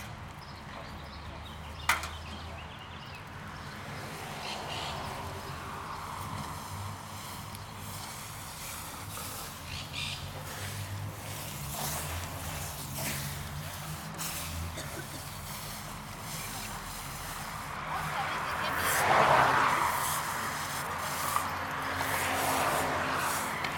Roma Nte., Ciudad de México, D.F., Mexico - D.F. Street Sweepers
Recorded with a pair of DPA4060s and a Marantz PMD661
April 2016